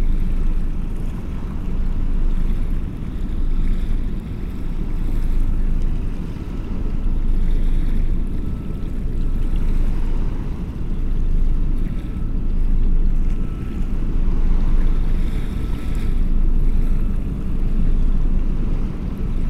Quillebeuf-sur-Seine, France - Boat on the Seine river
A big boat transporting gas is passing by on the Seine river.
22 July, 11:00